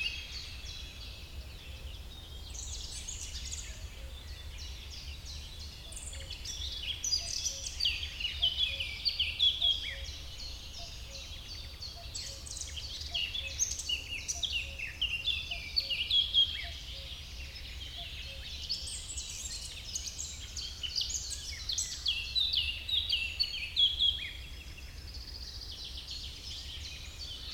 Chindrieux, France - Rossignol et pouillot véloce.
Au coeur de la forêt de Chautagne le matin, rossignol, pouillot véloce, faisan..... enregistreur DAT DAP1 Tascam, extrait d'un CDR gravé en 2003.
Auvergne-Rhône-Alpes, France métropolitaine, France, 24 May 2003, 08:00